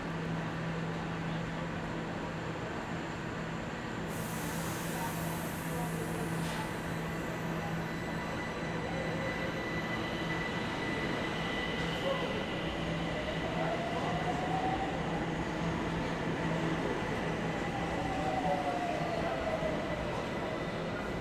Tehran Province, Tehran, District, Bab Homayoon St, Iran - Entering Tehran Metro from Imam Khomeini station
I used a Zoom H6 holding in my hand and entered metro station and ...